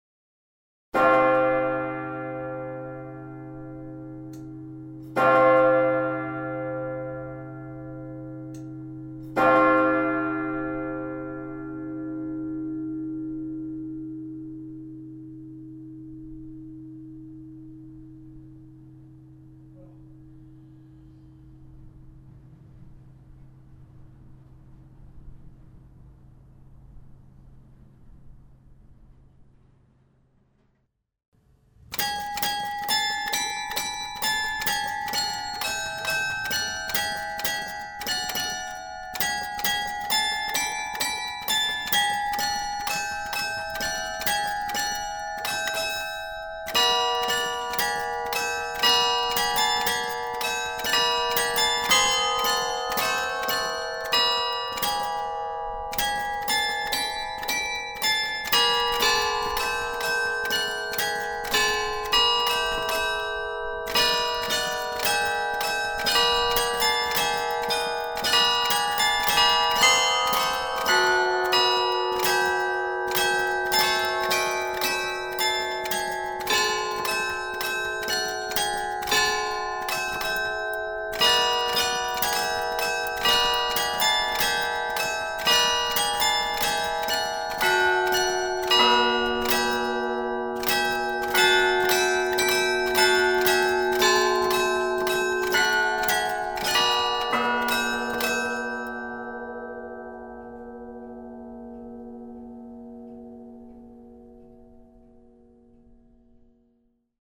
Thuin, Belgique - Thuin belfry
The Thuin belfry jingle. It's an horrible pneumatic system on bells, working badly.